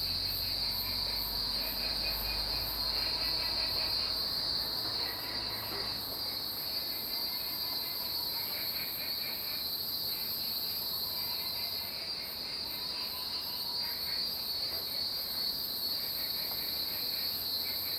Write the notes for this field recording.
Frogs chirping, Cicada sounds, Birds singing. Zoom H2n MS+XY